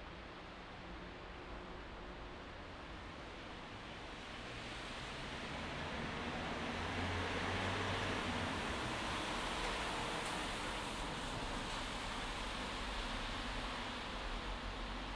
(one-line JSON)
{"title": "Favoriten, Wien, Österreich - underpass, main train station", "date": "2013-03-25 16:45:00", "description": "ambience of the underpass at the main train station - it´s used by car traffic, cyclists and pedestrians. You can also hear construction noise (the station is under construction) and the humming of the Gürtel-traffic\n- recorded with a zoom Q3", "latitude": "48.18", "longitude": "16.38", "altitude": "207", "timezone": "Europe/Vienna"}